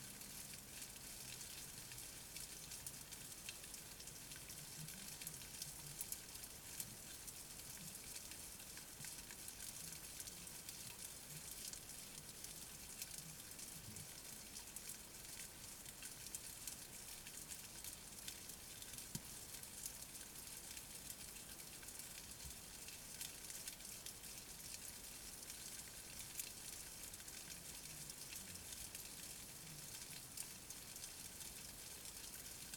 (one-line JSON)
{"title": "Our living room, Katesgrove, Reading, UK - silkworms in the living room", "date": "2014-08-18 01:00:00", "description": "Another recording of the silkworms. They are growing very fast, and now they are bigger, their tiny feet sound louder. You can begin to hear in this recording why sericulturists refer to the restful, peaceful sound of raising silkworms, and also the comparisons of the sounds of the worms with the sound of rain. The main sound is produced by their claspers (feet) rasping against the thick mulberry leaves.", "latitude": "51.44", "longitude": "-0.97", "altitude": "53", "timezone": "Europe/London"}